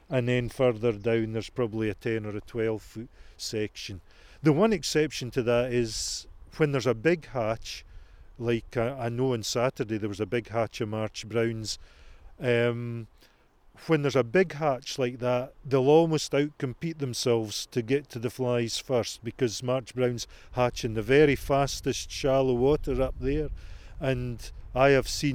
Kelso, Scottish Borders, UK - Tweed angler - Ronnie Glass
Angler describes how to 'read' the River Tweed for fishing. Ronnie Glass from Kelso is a Scottish National Trout Fly Fishing Champion. We stood under Kelso Old Bridge with drizzle in the air, as he explained the river seam and why fish and fishermen like rain. AKG condensor mic (early experiment!) and Zoom H4N.
Scottish Borders, Scotland, United Kingdom